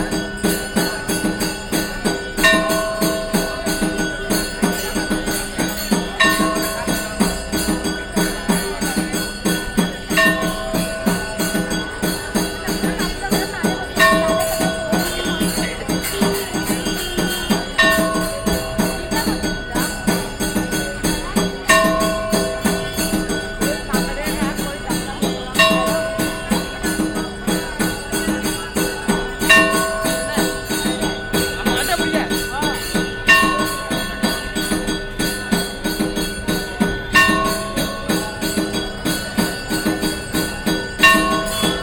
Mumbai, Dadar central, Railway temple